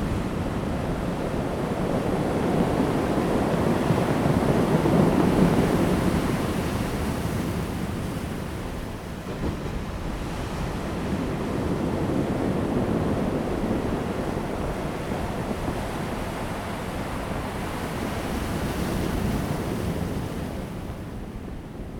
南田村, Daren Township - Wave impact produces rolling stones
Sound of the waves, wind, Wave impact produces rolling stones
Zoom H2n MS+XY